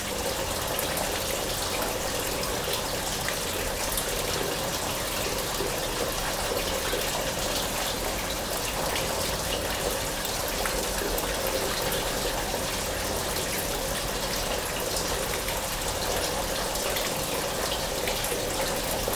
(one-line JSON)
{"title": "Volmerange-les-Mines, France - Eduard Stollen mine", "date": "2016-10-08 10:50:00", "description": "We are here in an extremely hard to reach mine. We opened the gates, in aim to let the water going out. Indeed, this mine was completely-totally flooded. We are here the first to enter inside this tunnel since decades, perhaps more. This is here the sounds of the walls oozing. Water oozes from the walls everywhere and fall in tubes, collecting water. After our visit, we closed the gates and slowly, the water flooded the tunnel again, letting the mine to its quiet death.", "latitude": "49.44", "longitude": "6.08", "altitude": "407", "timezone": "Europe/Paris"}